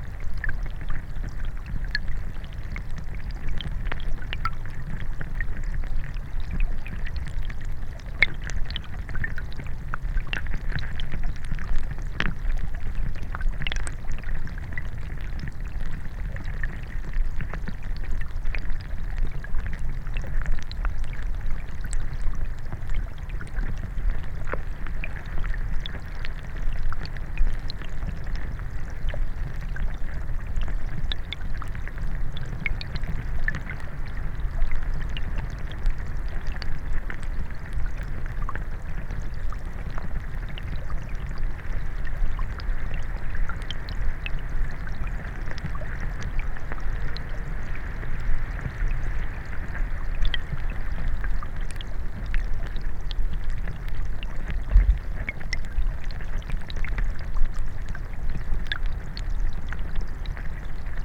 Voverynė, Lithuania, inside the streamlet
Underwater microphone in the streamlet